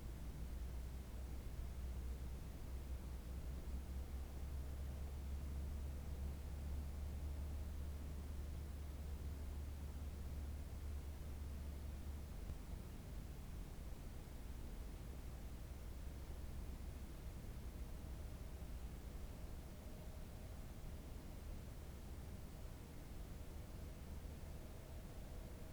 seehausen/uckermark: dorfstraße - the city, the country & me: area of an abandoned recreation home
passing car
the city, the country & me: november 13, 2011